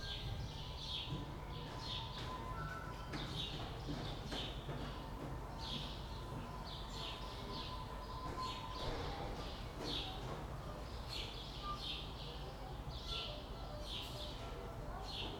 Berlin Bürknerstr., backyard window - flute excercises
someone excercises flute. warm spring evening.
7 June 2010, 19:30